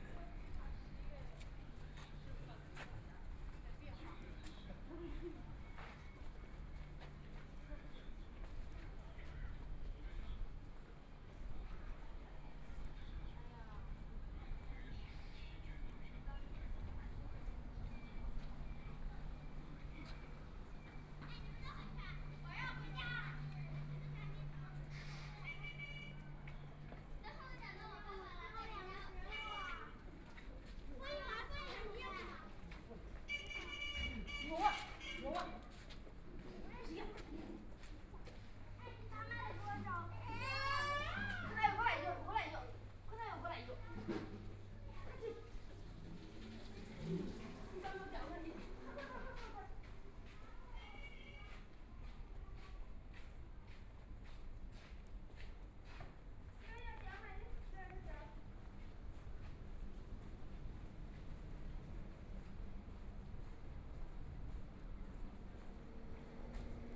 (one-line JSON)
{"title": "Zi Hua Road, Shanghai - in the Street", "date": "2013-11-29 16:39:00", "description": "Walking through the streets in traditional markets, Binaural recording, Zoom H6+ Soundman OKM II", "latitude": "31.23", "longitude": "121.48", "altitude": "7", "timezone": "Asia/Shanghai"}